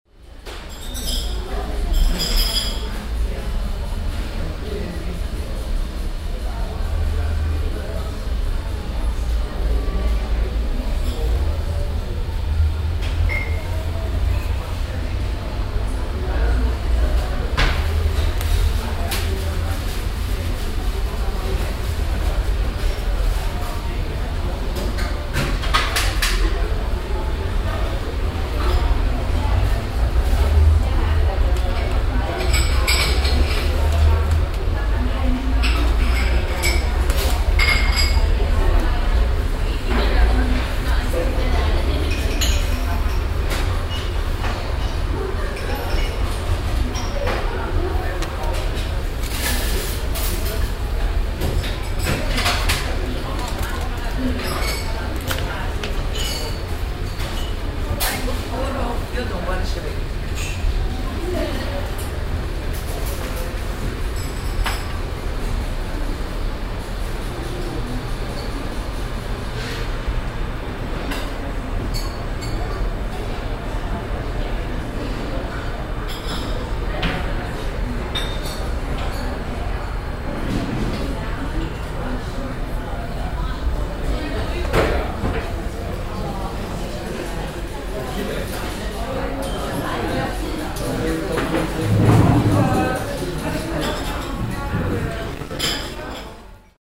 cologne, stadtgarten, soundmap, restaurant
stereofeldaufnahmen im september 07 mittags
project: klang raum garten/ sound in public spaces - in & outdoor nearfield recordings